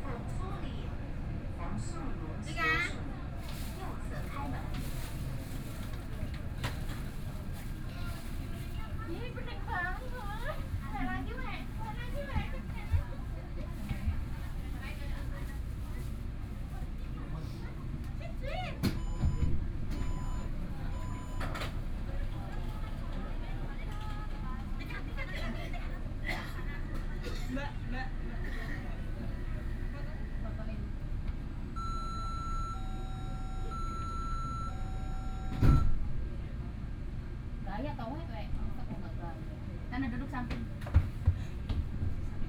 Tamsui District, New Taipei City - Tamsui Line (Taipei Metro)
from Zhuwei Station to Tamsui Station, Binaural recordings, Zoom H6+ Soundman OKM II